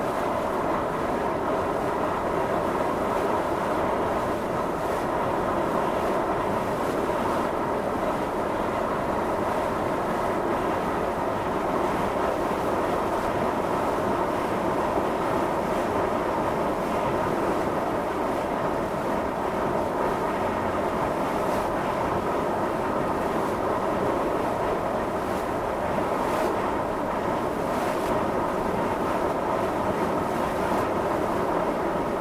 Most, Česká republika - Air system for the new Lake Most
Air system for the new Lake Most
Most, Czech Republic